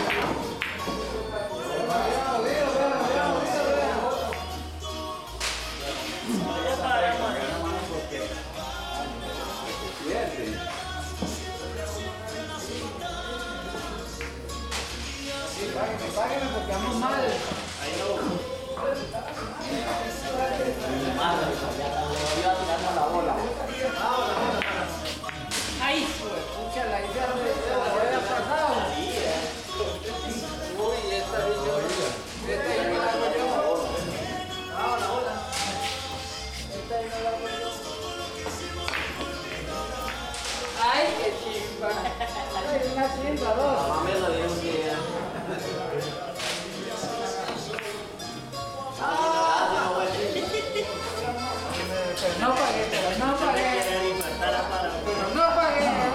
Rivera, Huila, Colombia - AMBIENTE BILLAR
GRABACIÓN STEREO, TASCAM DR-40 REALIZADO POR: JOSÉ LUIS MANTILLA GÓMEZ
20 June